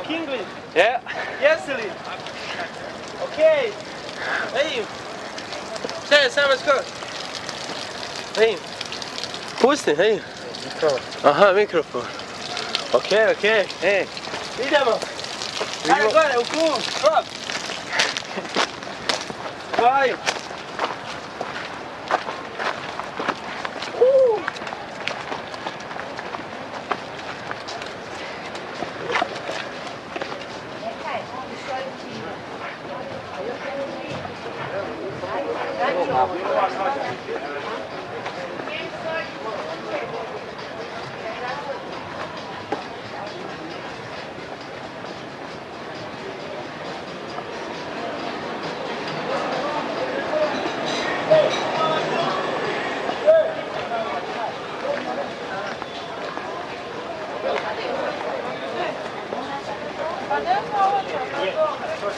Mostar, Bosnia and Herzegovina - Stari Most
August 2004. Recorded on a compact cassette and a big tape recorder.
During the Bosnia civil war, the Ottoman bridge called Stari Most was destroyed. It was rebuilt and finished in july 2004. I went back to Bosnia and especially to Mostar. There's a old tradition : people are jumping in the river Drina, to proof they would be a good husband. It's a 29 meters high jump. It's very impressive.
Here is an old recording of a guy jumping into the river Drina. It's an old recall of Bosnia.